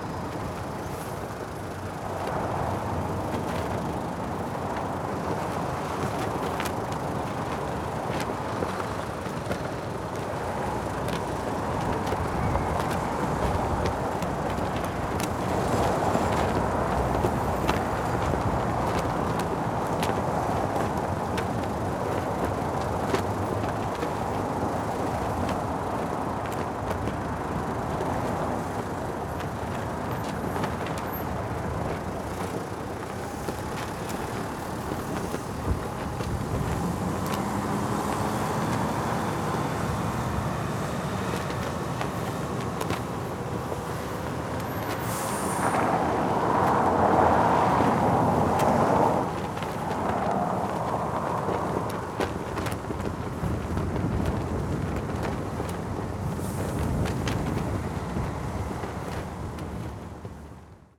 a vast Portuguese flag yanking in the strong wind. just by listening to the flapping sound one can imagine how heavy the flag is. tourist bus idling nearby. finally leaving, emptying some space for the flag to sound.